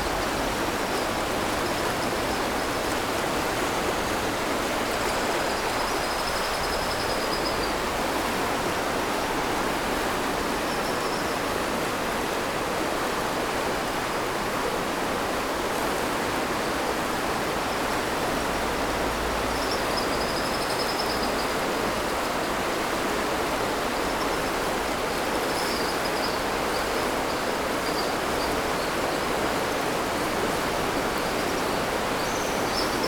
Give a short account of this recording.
Streams and swallows, Stream after Typhoon, Traffic Sound, Under the bridge, Zoom H6 MS+ Rode NT4